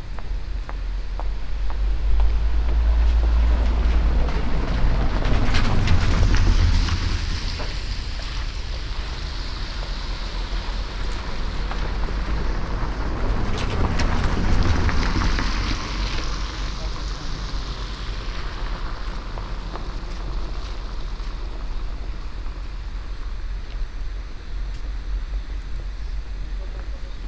a humming house ventilation, passengers and some cars passing by the snow covered small street
international city scapes and social ambiences
Magyarország, European Union